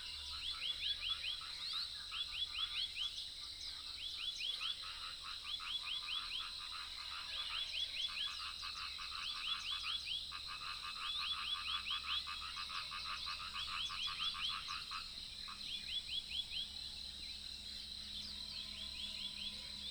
種瓜路4-2號, Puli Township - Early morning
Birdsong, Chicken sounds, Frogs chirping, Early morning